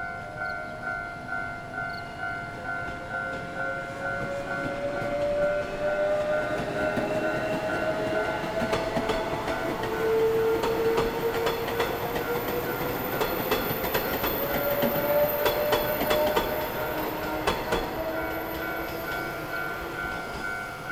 Xuejin Rd., Wujie Township - railroad crossing
At railroad crossing, Close to the track, Traffic Sound, Trains traveling through
Zoom H6 MS+ Rode NT4
Yilan County, Taiwan